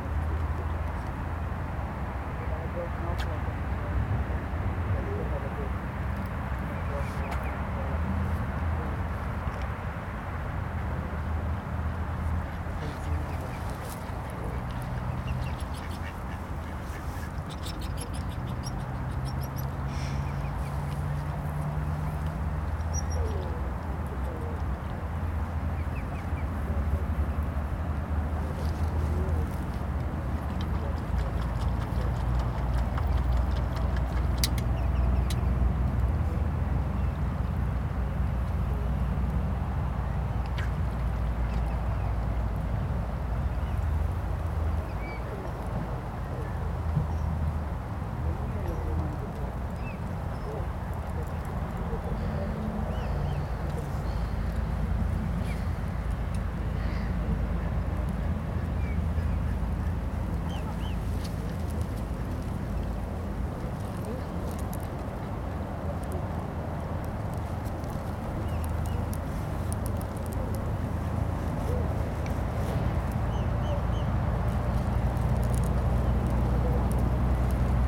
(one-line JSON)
{"title": "Limerick City, Co. Limerick, Ireland - by the wetlands observation platform", "date": "2014-07-18 14:32:00", "description": "birds, dogs, people, some traffic noise in the background. Aircraft passing.", "latitude": "52.66", "longitude": "-8.65", "altitude": "1", "timezone": "Europe/Dublin"}